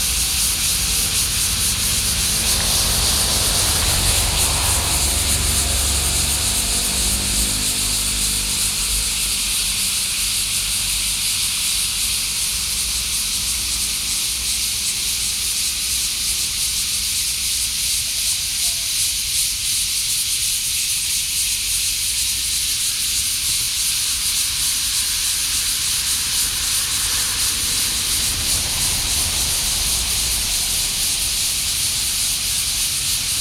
Dēnghuī Boulevard, Danshui District, New Taipei City - Cicadas
New Taipei City, Taiwan, 11 July, 05:03